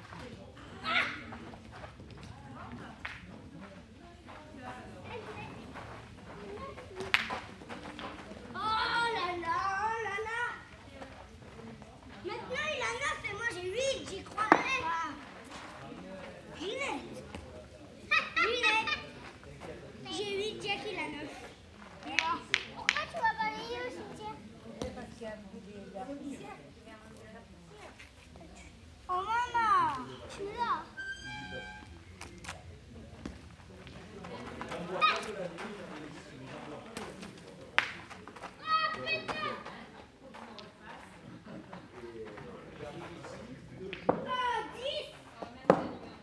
Sigale, Alpes-Maritimes - Two boys playing Petanque.
[Hi-MD-recorder Sony MZ-NH900, Beyerdynamic MCE 82]
Sigale, France, 18 August 2014